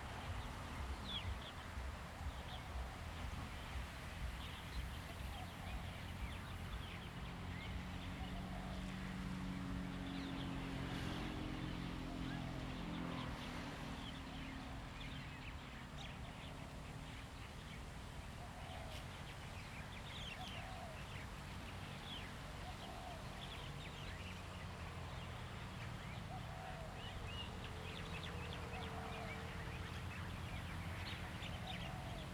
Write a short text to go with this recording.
Birds singing, Forest and Wind, Zoom H2n MS+XY